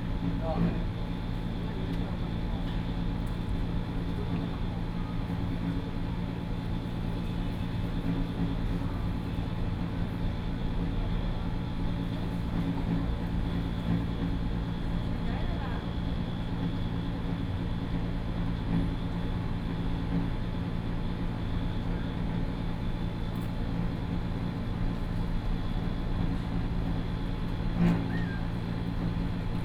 Zhongli Station - On the platform
Noise air conditioning unit, Mobile voice, Sound broadcasting station, By train arrived and the exerciseSony, PCM D50 + Soundman OKM II
Zhongli City, Taoyuan County, Taiwan, 16 September 2013, 15:51